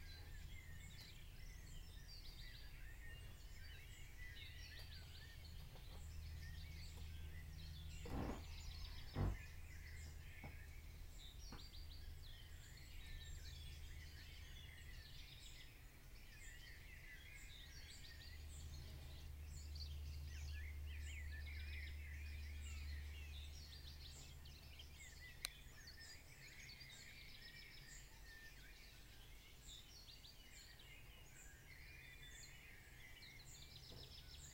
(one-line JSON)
{"title": "rural atmosphere, a. m. - Propach, rural atmosphere, 4 a. m.", "description": "recorded june 1, 2008 - project: \"hasenbrot - a private sound diary\"", "latitude": "50.85", "longitude": "7.52", "altitude": "263", "timezone": "GMT+1"}